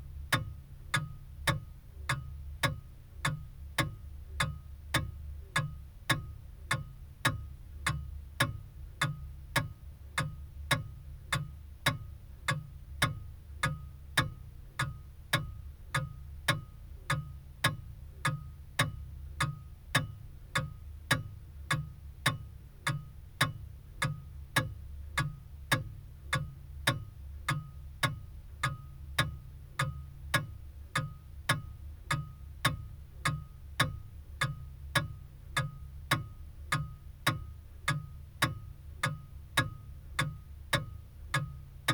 pendulum wall clock ... olympus ls 14 integral mics ... inside the casing with the door shut ... the clock is possibly 100+ years old ... recorded on possibly my last visit to the house ...
England, United Kingdom, 7 May 2021, 06:00